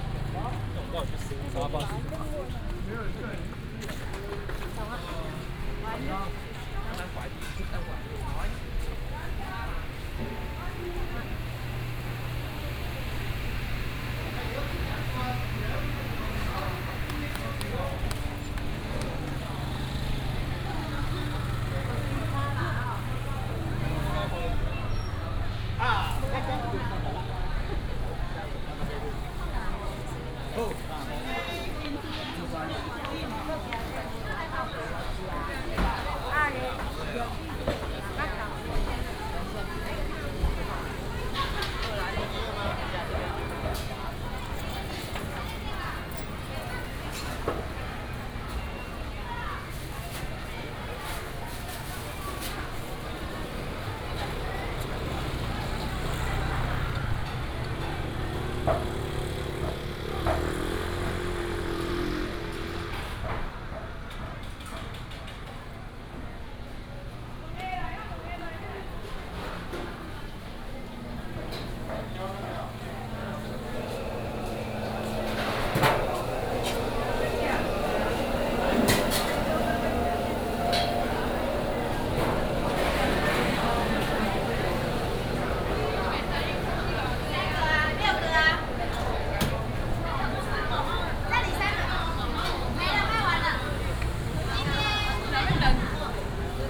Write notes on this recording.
walking in the Evening market, Traffic sound